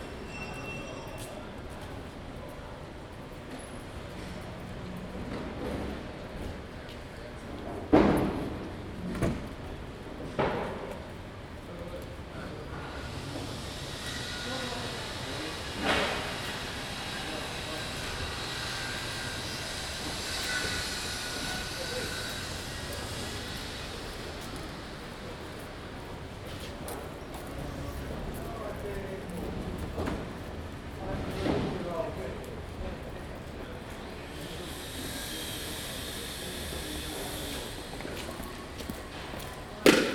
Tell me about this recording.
Building work on the corner of Queen Anne's Gate and Dartmouth Street, London. Sounds from the building site with passing pedestrians. Zoom H2n